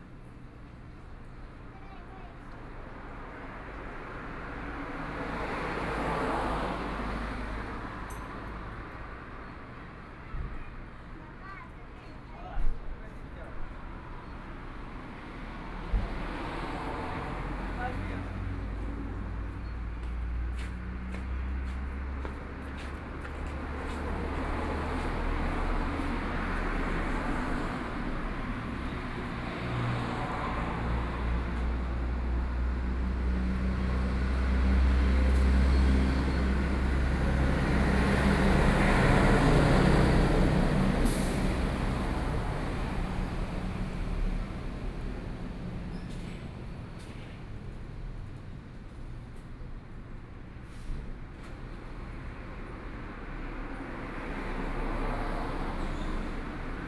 Sec., Zhuangbin Rd., Zhuangwei Township - At the roadside
At the roadside, In front of the convenience store, Traffic Sound
Sony PCM D50+ Soundman OKM II
Yilan County, Taiwan